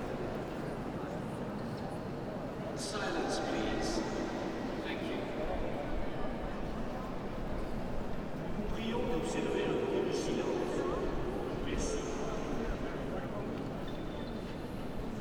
Pantheon. Rom
Standing under the central opening to the sky
Rome, Italy, May 2012